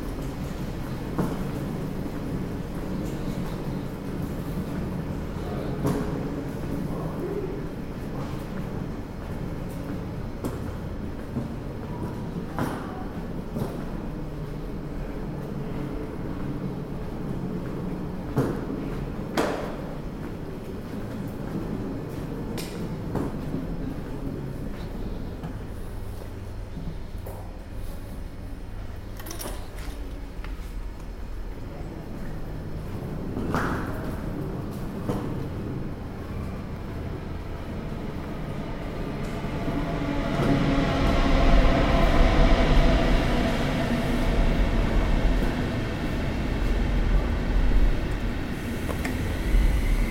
{"title": "Rosenheim, main station, pedestrian underpass", "description": "recorded june 7, 2008. - project: \"hasenbrot - a private sound diary\"", "latitude": "47.85", "longitude": "12.12", "altitude": "448", "timezone": "GMT+1"}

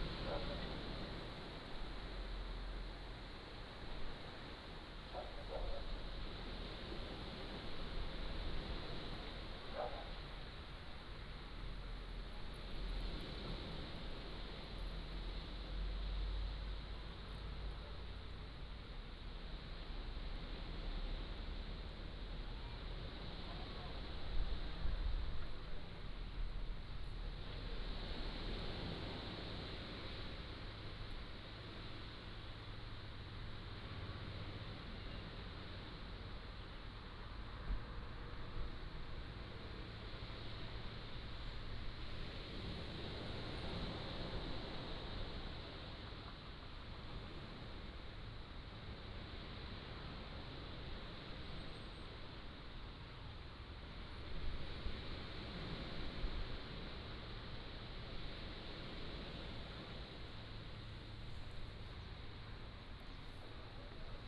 {"title": "牛角, Nangan Township - Walking in the temple", "date": "2014-10-14 16:46:00", "description": "Walking in the temple, Sound of the waves, Dogs barking", "latitude": "26.16", "longitude": "119.95", "altitude": "21", "timezone": "Asia/Taipei"}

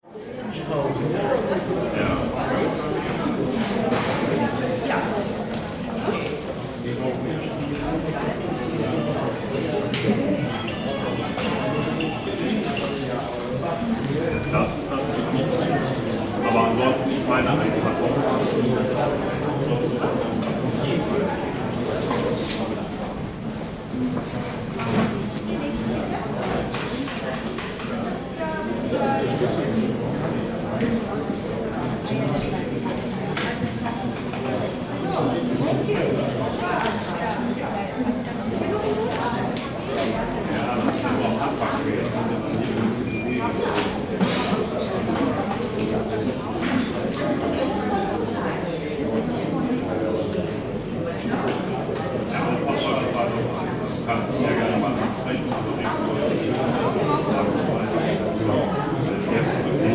{"title": "köln, breite str, post - post office, awaiting service", "date": "2009-01-26 14:30:00", "description": "26.01.2009 14:30\npostfiliale, wartende menschen, gespräche, gemurmel /\npost office, people waiting, talks and muttering", "latitude": "50.94", "longitude": "6.95", "altitude": "61", "timezone": "Europe/Berlin"}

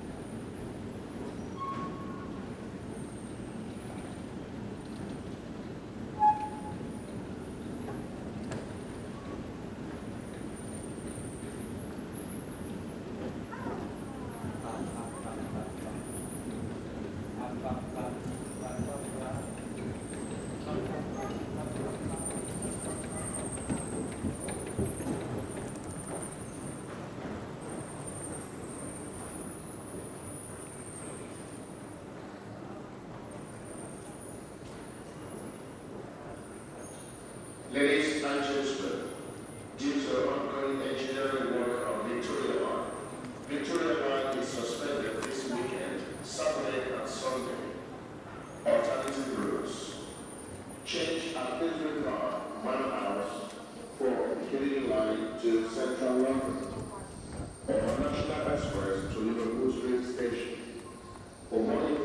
{"title": "tottenham hale", "description": "train station.\nchanging from stansted express to the london tube.\nrecorded july 18, 2008.", "latitude": "51.59", "longitude": "-0.06", "altitude": "11", "timezone": "GMT+1"}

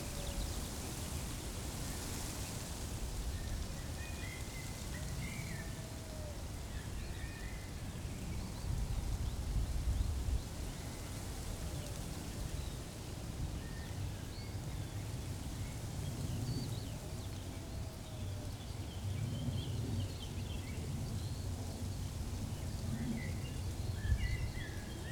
{"title": "Beselich, Niedertiefenbach - forest edge, leaves in wind", "date": "2014-04-21 18:35:00", "description": "windy edge of a young forest\n(Sony PCM D50, Primo EM172)", "latitude": "50.44", "longitude": "8.15", "altitude": "231", "timezone": "Europe/Berlin"}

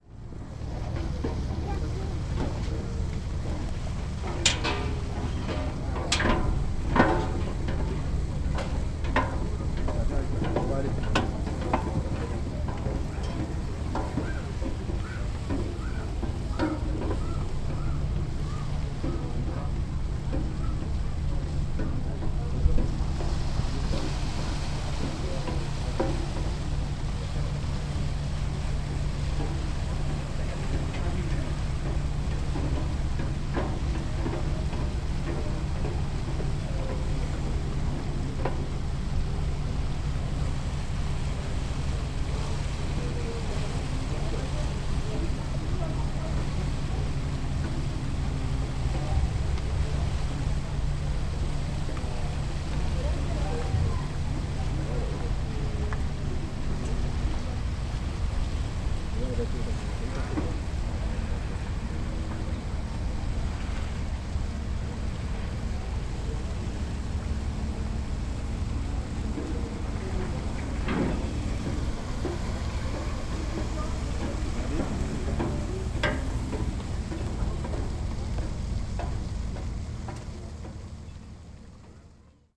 {"title": "France - Ferry landing in Groix", "date": "2015-08-05 16:30:00", "description": "People are waiting on the outside deck of the Ferry landing in the island Groix.", "latitude": "47.64", "longitude": "-3.45", "timezone": "GMT+1"}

